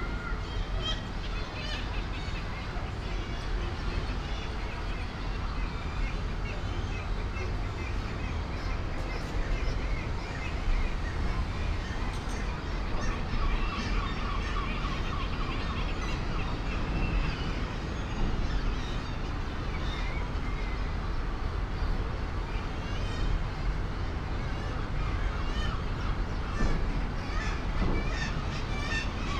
St Nicholas Cliff, Scarborough, UK - kittiwakes at the grand hotel ...
kittiwakes at the grand hotel ... SASS ... bird calls ... herring gull ... background noise ... voices ... footfall ... traffic ... boats leaving the harbour ... air conditioning units ... almost a month since the last visit ... the ledges etc are very cramped now ... the young are almost as big as the adults ... many are enthusiastic wing flappers exercising their wings ...